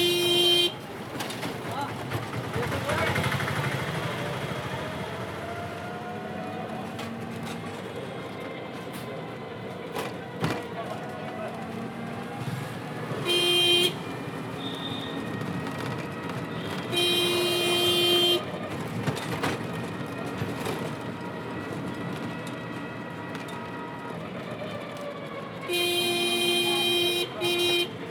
Police Colony, Sector, Rama Krishna Puram, New Delhi, Delhi, India - 05 Horny TukTuk
Recording from inside a TukTuk - regular ride on a busy street.
Zoom H2n + Soundman OKM
20 January 2016, ~13:00